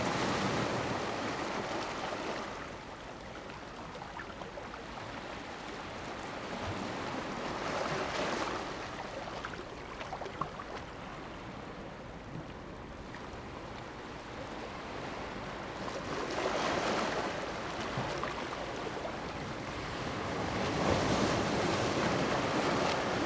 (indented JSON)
{
  "title": "Jizatay, Ponso no Tao - Waves and tides",
  "date": "2014-10-30 09:56:00",
  "description": "Small pier, Sound of the waves\nZoom H2n MS +XY",
  "latitude": "22.03",
  "longitude": "121.54",
  "altitude": "6",
  "timezone": "Asia/Taipei"
}